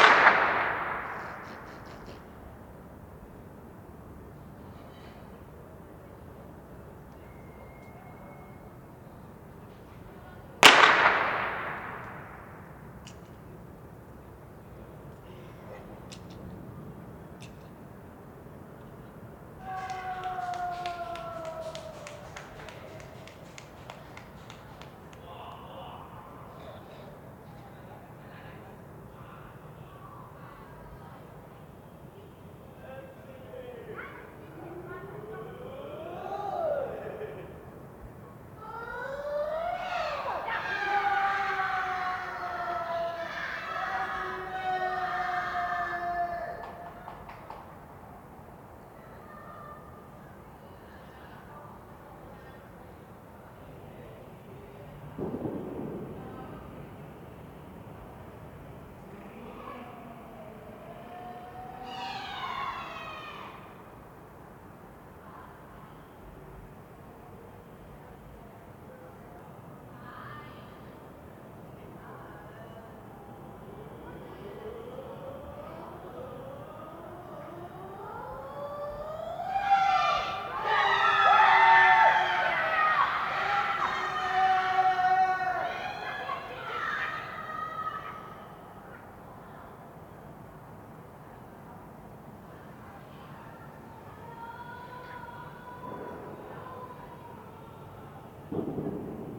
Moabit, Berlin, Germany - Penalty Shootout screams, Champions League final, Bayern Munich v Chelsea
Fans caught up in the atmosphere of the match while watching it on TV a couple of buildings distant. Obviously Bayern supporters, it all goes wrong when Chelsea win (maybe around 4'20" in). Even the soundscape sounds disappointed. Some of the longer gaps between events have been edited, so it's not quite real time.
19 May